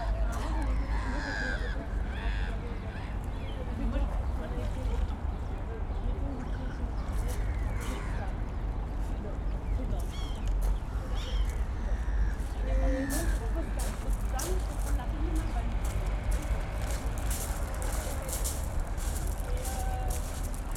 Michaelkirchplatz / Engelbecken, Berlin, Deutschland - Cafe Engelbecken
It is Saturday. We hear people and animals, mostly birds, embedded in the city, a busy urban environment in Corona times.